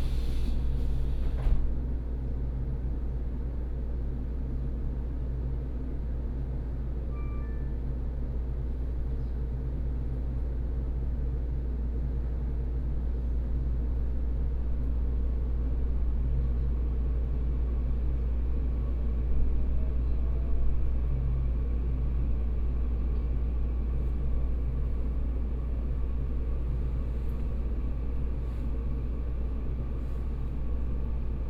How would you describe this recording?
In a railway carriage, from Linnei Station toShiliu Station